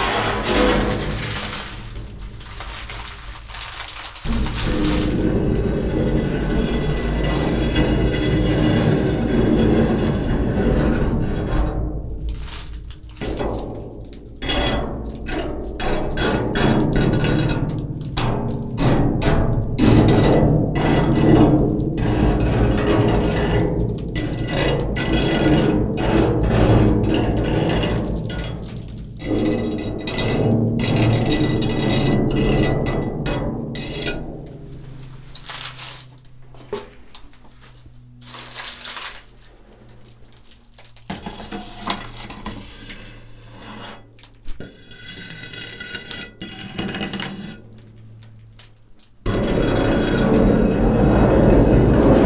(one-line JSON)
{"title": "quarry, metal shed, Marušići, Croatia - void voices - stony chambers of exploitation - metal shed", "date": "2015-07-22 19:05:00", "description": "dry leaves, with sharp flat carved stone in hand, touching the walls and objects inside - metal thing and plastic chair ...", "latitude": "45.41", "longitude": "13.74", "altitude": "267", "timezone": "Europe/Zagreb"}